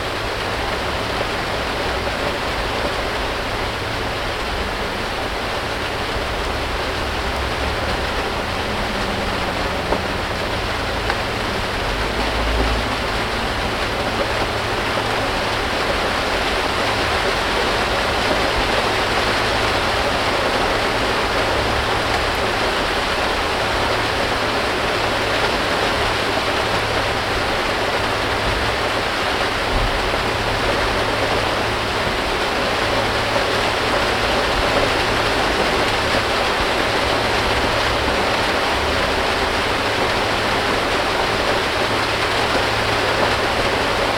{"title": "Unit, Old Sarum, Salisbury, UK - 062 Rain on the archive store", "date": "2017-03-03 08:15:00", "latitude": "51.10", "longitude": "-1.78", "altitude": "78", "timezone": "Europe/London"}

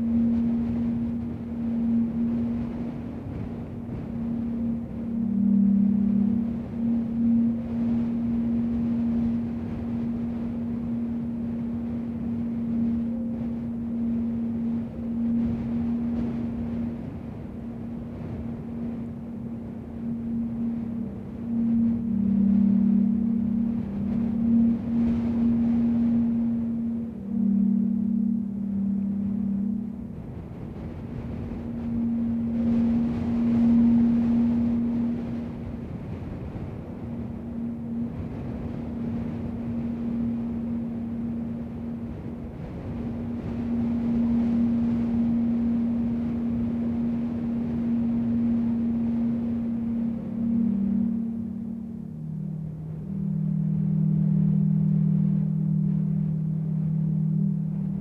{"title": "Chacaltaya - Wind singing in an old tube on the top of the mountain", "date": "2014-02-16 12:00:00", "description": "On the top of the mountain, the wind is howling in the old installation of the ski station of Chacaltaya, Bolivia. The station was the highest in the world, and stops a few years ago, because of the smelting of the ice (du to global warming).\nThe teleski cable and tube is still there and the wind sing in it!\nSound recorded by a MS setup Schoeps CCM41+CCM8 with a Cinela Zephyx Windscreen\nSound Devices 788T recorder with CL8\nMS is encoded in STEREO Left-Right\nrecorded in february 2014 on the top of Chacaltaya Mountain, above La Paz, Bolivia.", "latitude": "-16.35", "longitude": "-68.12", "altitude": "5240", "timezone": "America/La_Paz"}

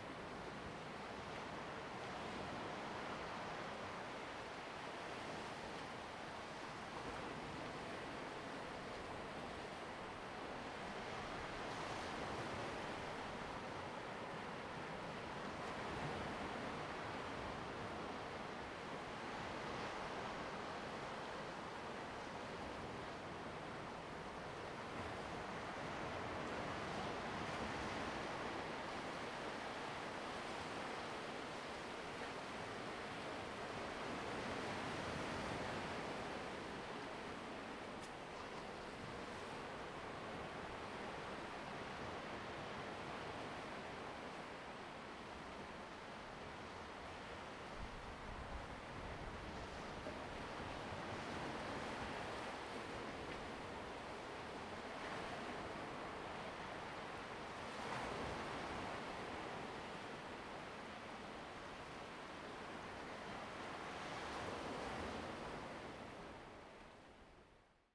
{"title": "Dabaisha Diving Area, Lüdao Township - On the coast", "date": "2014-10-30 14:35:00", "description": "On the coast, sound of the waves\nZoom H2n MS +XY", "latitude": "22.64", "longitude": "121.49", "altitude": "10", "timezone": "Asia/Taipei"}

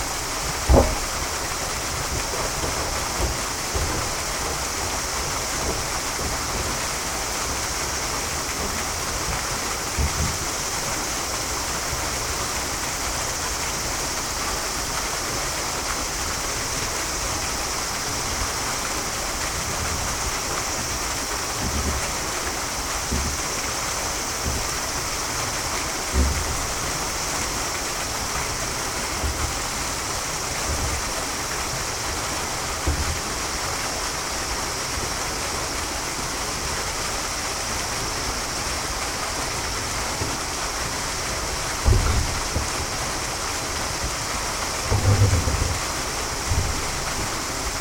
{"title": "Dainakacho, Higashiomi, Shiga Prefecture, Japan - Notogawa Suisha", "date": "2014-08-13 14:22:00", "description": "Sounds of Notogawa Suisha (waterwheel), an old waterwheel in the Japanese countryside. The site includes a small park, historical information, and a boat rental facility. Recorded with a Sony M10 recorder and builtin mics on August 13, 2014.", "latitude": "35.19", "longitude": "136.14", "altitude": "82", "timezone": "Asia/Tokyo"}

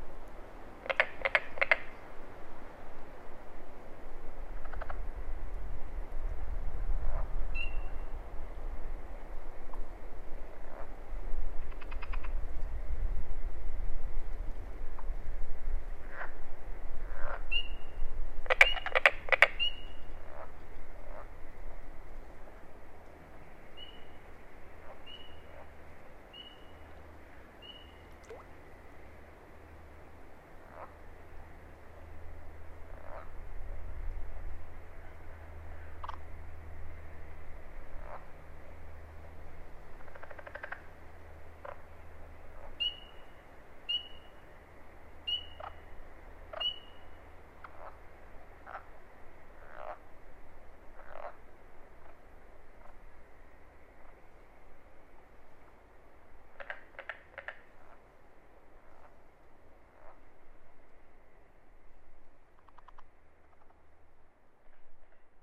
{"title": "Wharton State Forest, NJ, USA - Bogs of Friendship, Part Two", "date": "2007-05-01 20:00:00", "description": "This was the first field recording I attempted to make. Located in the pine barrens of New Jersey, this series of small ponds was hyperactive with frog activity. The cast of characters include: Pine Barrens Tree Frogs, Spring Peepers, Fowler's Toads, Southern Leopard Frogs, & Carpenter Frogs, and a nice piney wind. Microtrack recorder used with a pair of AT3032 omnidirectional mics.", "latitude": "39.74", "longitude": "-74.58", "altitude": "21", "timezone": "America/New_York"}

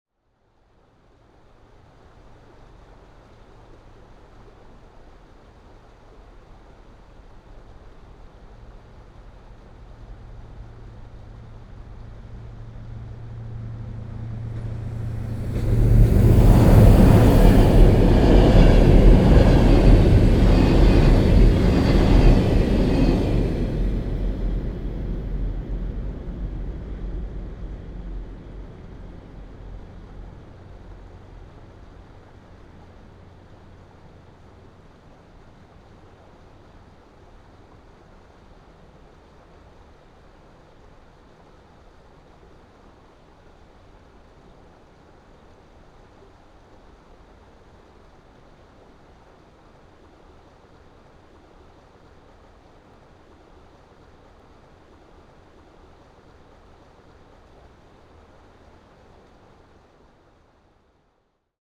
{"title": "Cesta na Červený most, Bratislava-Nové Mesto, Slovakia - Trains on Red Bridge", "date": "2020-12-25 20:42:00", "description": "Recording of trains on \"Red Bridge\" in Bratislava, at this location railway line leads through city forest. Passenger train, freight trains.", "latitude": "48.17", "longitude": "17.08", "altitude": "198", "timezone": "Europe/Bratislava"}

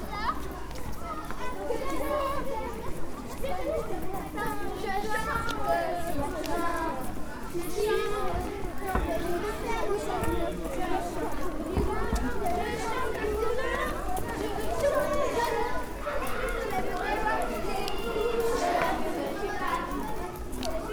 {"title": "L'Hocaille, Ottignies-Louvain-la-Neuve, Belgique - Folowing children", "date": "2016-03-23 09:20:00", "description": "Following children, from the main place of Louvain-La-Neuve, to the Blocry swimming pool. Sometimes, they are singing. A young child noticed me and said : wow, he's not allowed to record us ;-) He was 6-7 years old and I was discreet !\nWalking with them was very enjoyable.", "latitude": "50.67", "longitude": "4.60", "altitude": "133", "timezone": "Europe/Brussels"}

